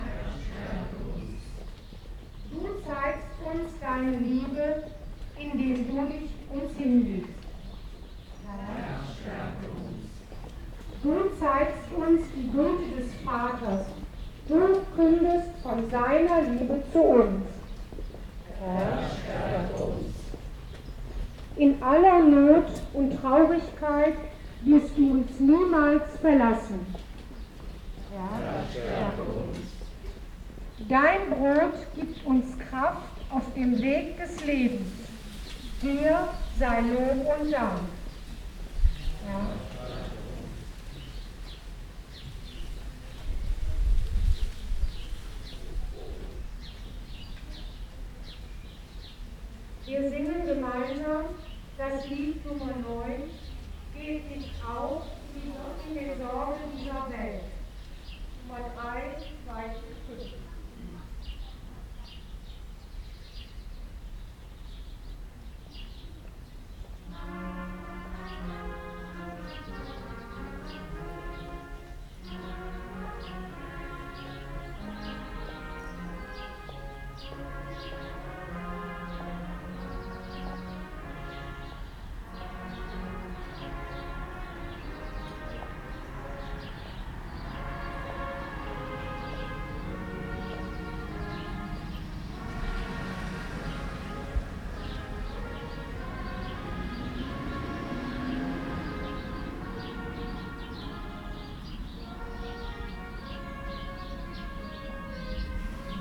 Langel, Köln, Deutschland - Fronleichnams-Prozession / Corpus Christi procession
Vorbeterin mit Megaphon, Betende, Rauschen von Blättern, Vögel, Gesang und Musik, ein Auto biegt ab.
Prayer leader with megaphone, Prayers, rustling of leaves, birds, singing and music, a car turns
Cologne, Germany, 4 June, ~11:00